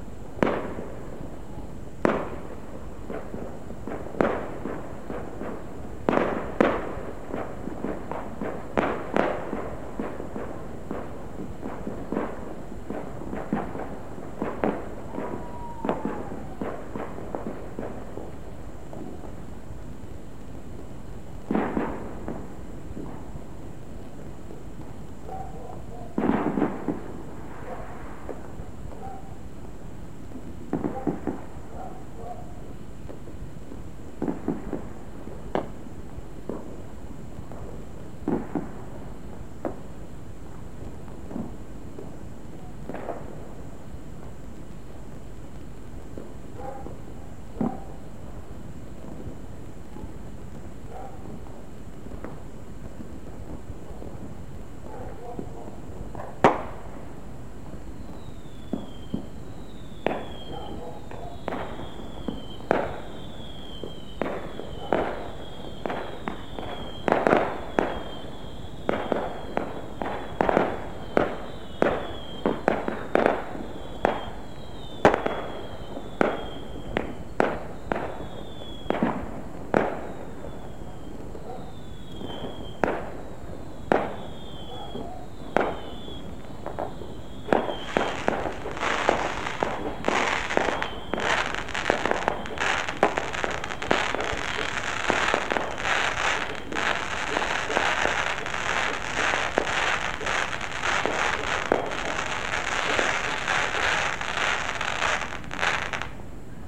Lander Close, Old Hall - New Year's Fireworks
Fireworks on New Year's eve, and day, 2009 and 2010.